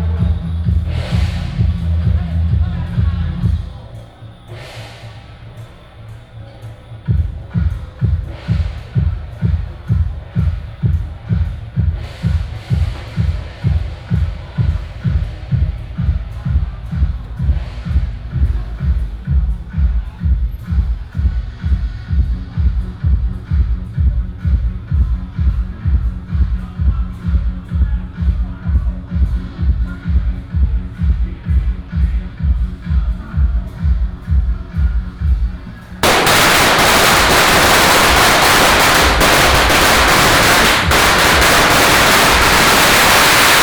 Daren St., Tamsui Dist., New Taipei City - walking in the Street
Traditional temple festivals, Firecrackers sound, temple fair
16 April 2017, 10:13